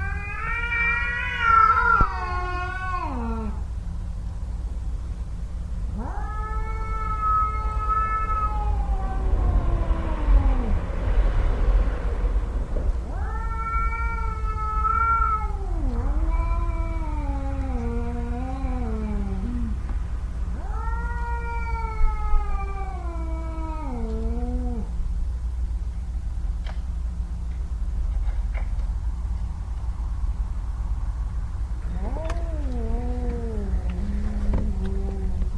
cat on heat
cats on heat at night